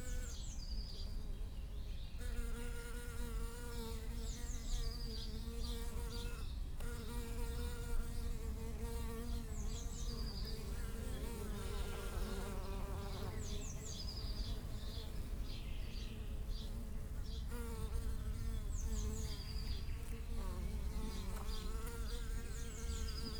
allotment, Beermannstr., Treptow, Berlin - bees at little pond
bees picking up drops of water at the little pond, train passing nearby
(SD702 DPA4060)
Berlin, Deutschland, European Union